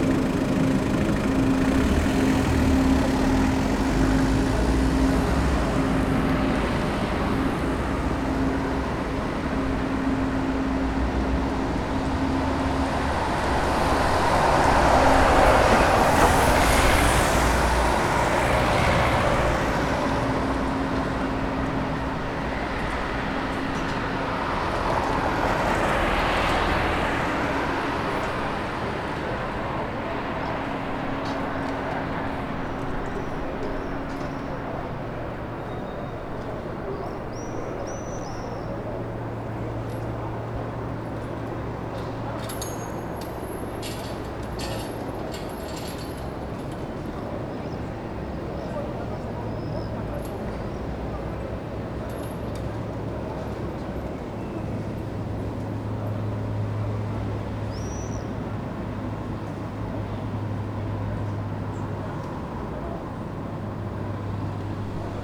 Boulevard Jules Guesde, Saint-Denis, France - Outside Église Saint-Denis-de-lEstrée
This recording is one of a series of recording, mapping the changing soundscape around St Denis (Recorded with the on-board microphones of a Tascam DR-40).